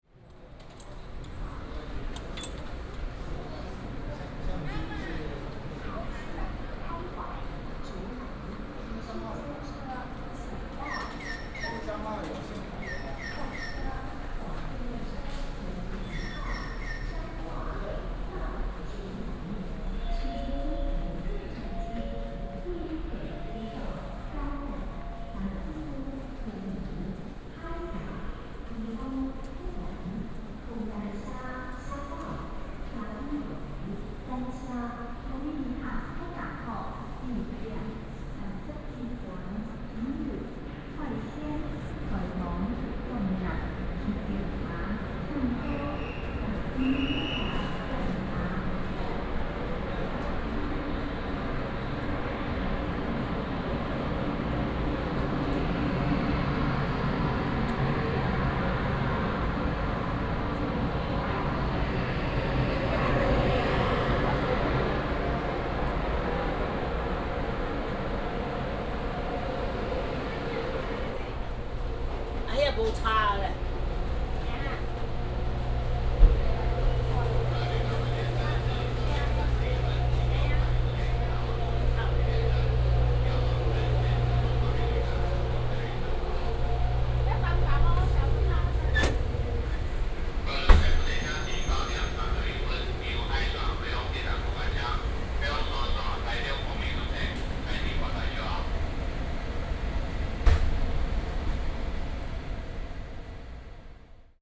Changhua Station, Taiwan - walking in the Station
walking in the Station, From the station hall to the platform
Changhua County, Taiwan, 19 January